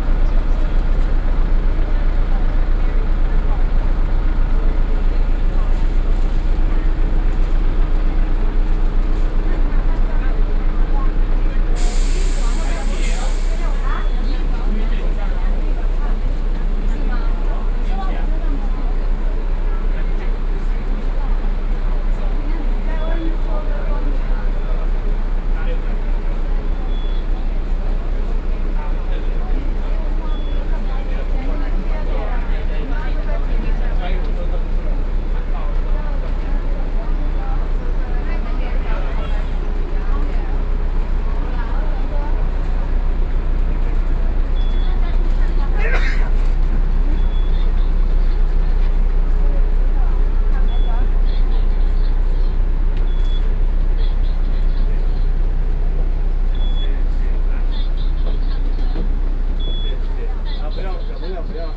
Taiwan High Speed Rail Station, In the Bus stop, Sony PCM D50 + Soundman OKM II